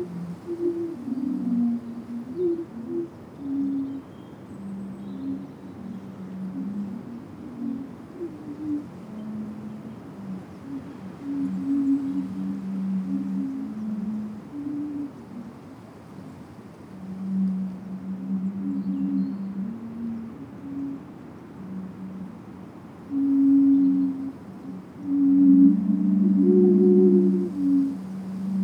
Spark Bridge - Bamboo Wind Flutes
Five 10' high home-made bamboo wind flutes standing vertically in a circle of about 2m diameter. Four thick and one thinner bamboo flute. The wind was rising during the afternoon, a precursor to storm Ciara. The higher pitched notes come from the thinner bamboo. If you would like to commission a set of these wind flutes, then please get in touch.
(SDMixpre10 + 2 spaced DPA4060)
England, United Kingdom